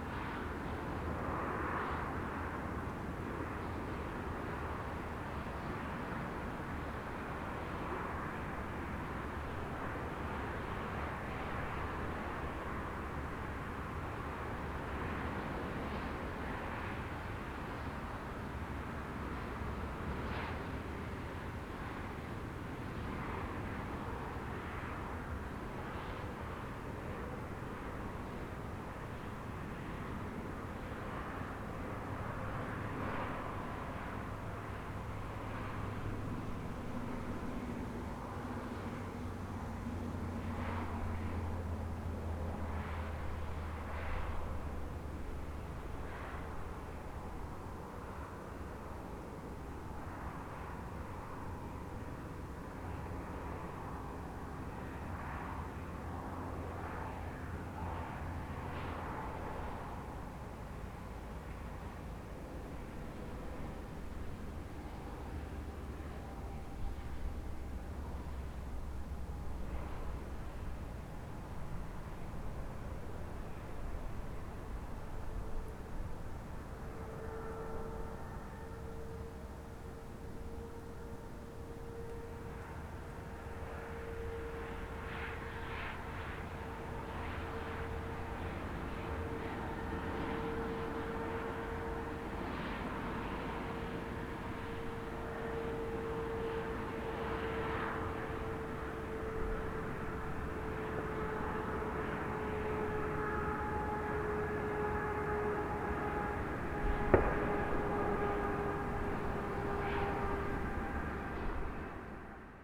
Lithuania, from Vaikutenai mound
just distant traffic from an ancient mound